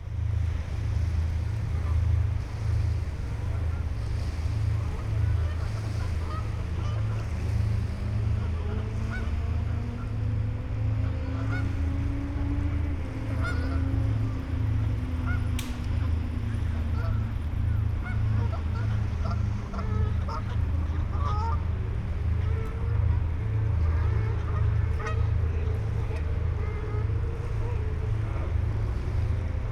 Rheinpark, Deutz, Cologne, Germany - river Rhein ambience with geese
Köln Deutz, Rheinpark, evening ambience, ship and traffic drone, a flock of geese
(Sony PCM D50, Primo EM172)
Köln, Germany